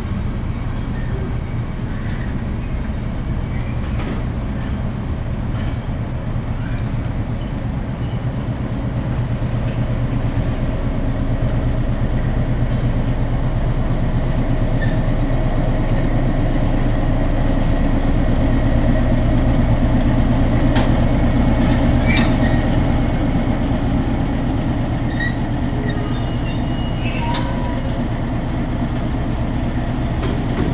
posunovaný vlak a hlas paní v hale
Roudnice nad Labem, Czech Republic, 15 July 2014